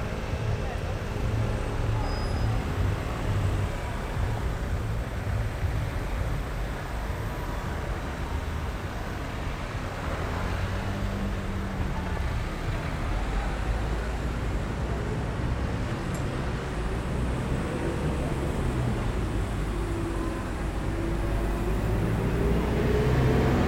traffic
[XY: smk-h8k -> fr2le]